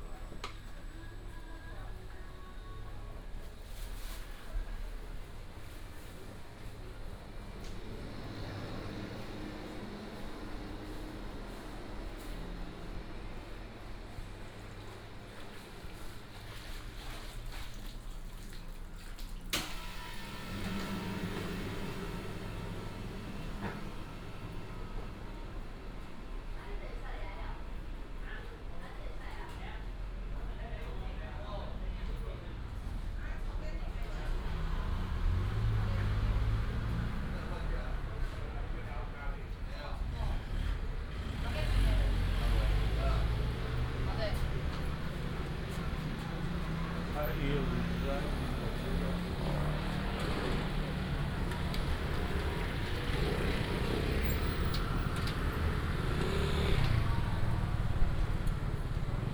苑裡公有零售市場, Miaoli County - Walking through the market
Walking through the market